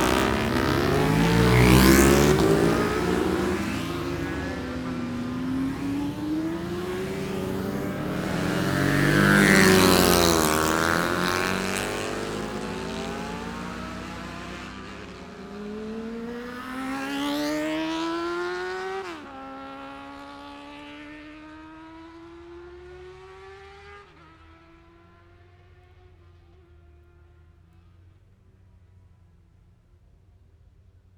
Scarborough District, UK - Motorcycle Road Racing 2016 ... Gold Cup ...
Lightweight up to 400 cc practice ... Mere Hairpin ... Oliver's Mount ... Scarborough ... open lavalier mics clipped to baseball cap ...